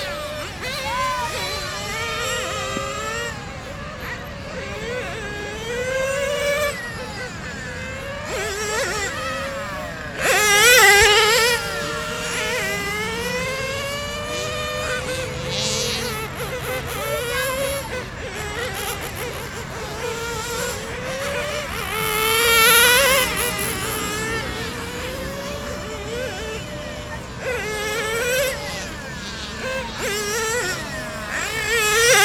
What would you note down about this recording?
Remote control car, Zoom H4n+Rode NT4